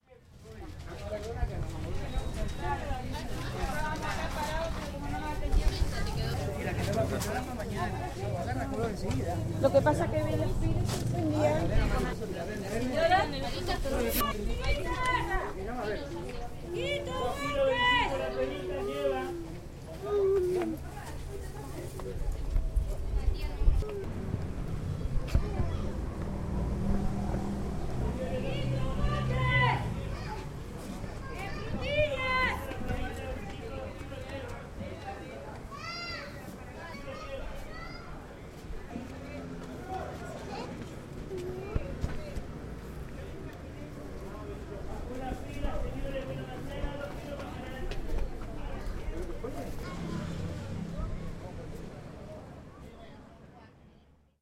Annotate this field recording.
All saturdays there is a vegetable market.